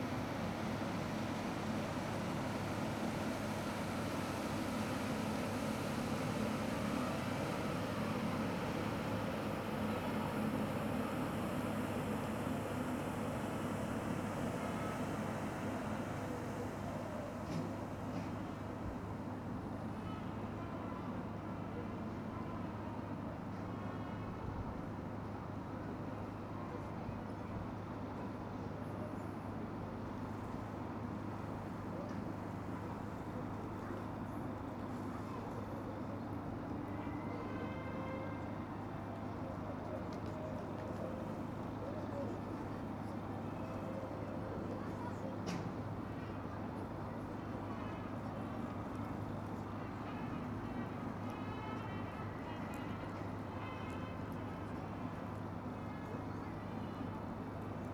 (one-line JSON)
{"title": "Baltic Square, Gateshead, UK - Sunday morning by Gateshead Millennium Bridge", "date": "2016-11-13 10:45:00", "description": "Recorded on a handheld Tascan DR-05 stood next to the Gateshead Millenium Bridge. Noise of busker and Sunday market can be heard from the Newcastle side of the River Tyne.", "latitude": "54.97", "longitude": "-1.60", "altitude": "2", "timezone": "Europe/London"}